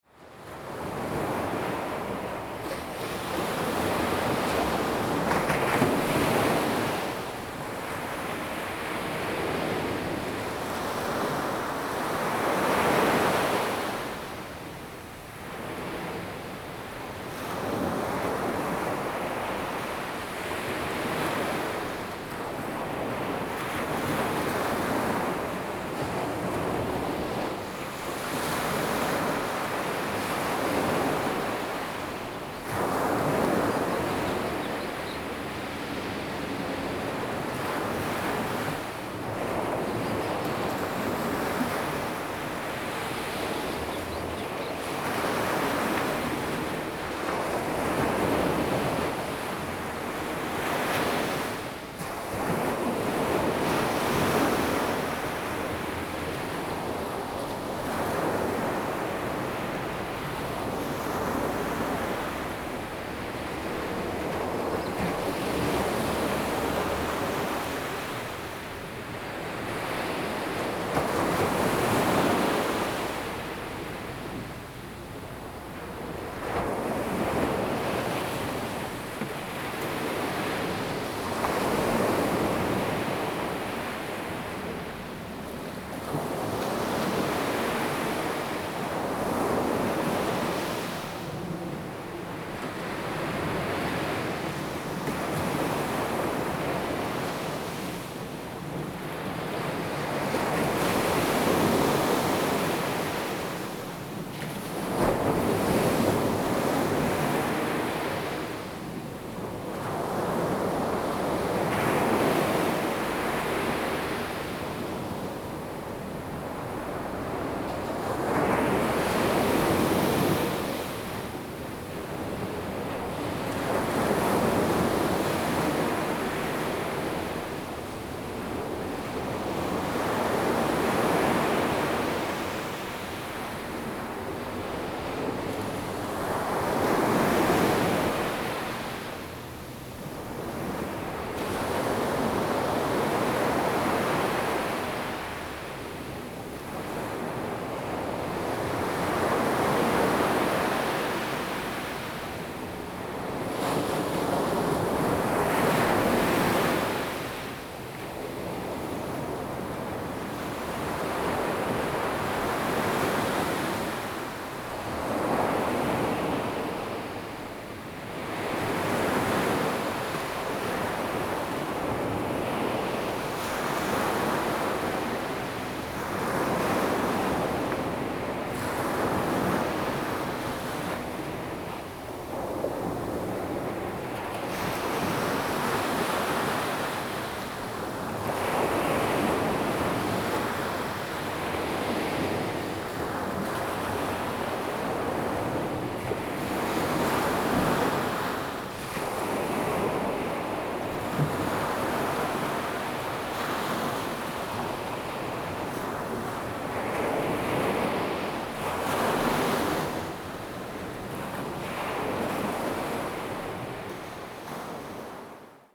淺水灣, 三芝區, New Taipei City - Big Wave
Big Wave, Sound of the waves
Zoom H2n MS+H6 XY